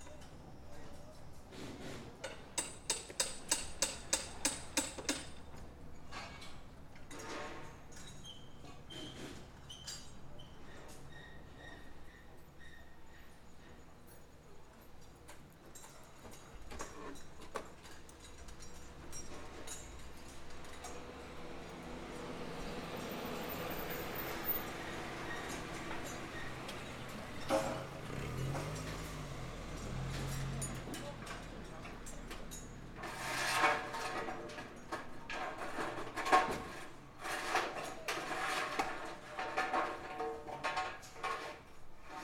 Nabeyamamachi, Nakama, Fukuoka, Japan - Wooden House Construction
A group of builders completing the frame of a modern wooden house.
福岡県, 日本, 1 April 2019